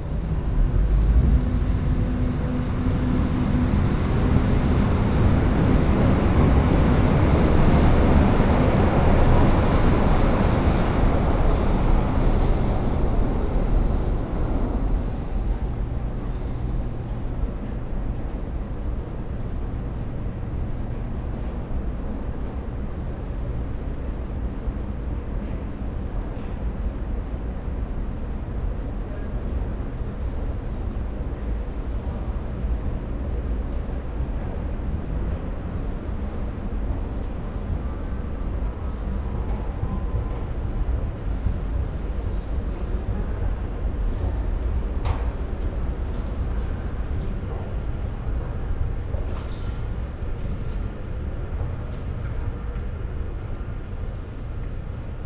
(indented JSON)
{
  "title": "Kottbusser Tor, Berlin, Deutschland - soundwalking in the pandemic",
  "date": "2020-03-25 15:35:00",
  "description": "Berlin, Kottbusser Tor, walking through the station on different levels. Only a fraction of people are here, compared to normal crowded workdays, trains are almost empty\n(Sony PCM D50, Primo EM172)",
  "latitude": "52.50",
  "longitude": "13.42",
  "altitude": "38",
  "timezone": "Europe/Berlin"
}